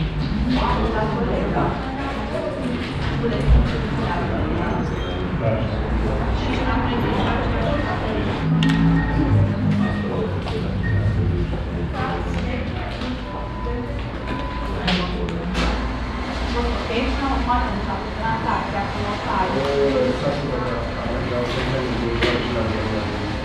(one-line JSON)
{"title": "Central Area, Cluj-Napoca, Rumänien - Cluj, bank and exchange office", "date": "2012-11-15 11:30:00", "description": "Inside a bank with exchange office. The sounds of people talking in the waiting line and the electronic and mechanical sounds of different kind of bank machines and telephones. In the background the traffic noise coming in through the display window.\ninternational city scapes - topographic field recordings and social ambiences", "latitude": "46.77", "longitude": "23.59", "altitude": "346", "timezone": "Europe/Bucharest"}